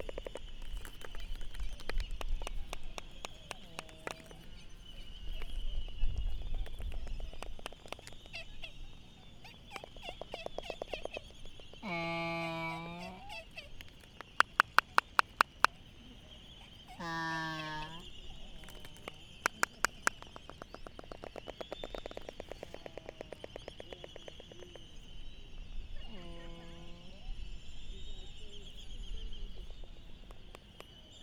Laysan albatross dancing ... Sand Island ... Midway Atoll ... bird calls ... Laysan albatross ... red-tailed tropic birds ... open lavalier mics on mini tripod ... background noise ... some windblast ... traffic ... voices ...
United States, 16 March 2012